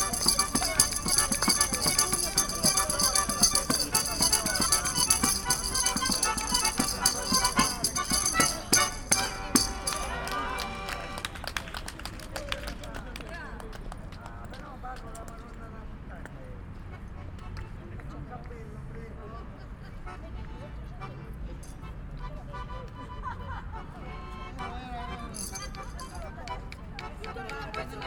MediaPark, Cologne, Germany - musicians and reflections
two probably italian musicians playing in front of the hotel Mediapark Köln, percussive sounds reflecting at the walls.
(Sony PCM D50, DPA4060)